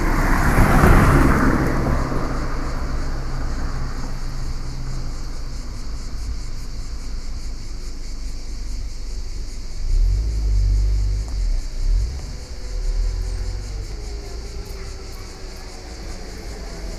bologna, via vallescura, ingresso ingegneria
summer, car, step, cicadas, voice
Bologna, Italien, 18 July